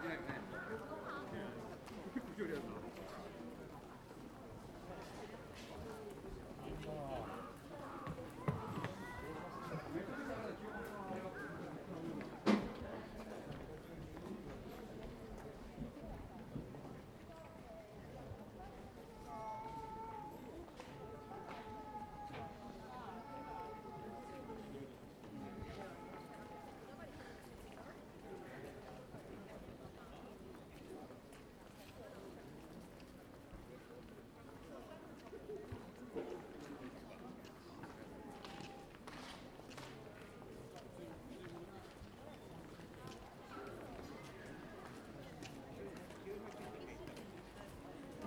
Yoyogikamizonochō, Shibuya-ku, Tōkyō-to, Japonia - Meiji Jingu
Meiji Jingu during the last day of the year. Recorded with Zoom H2n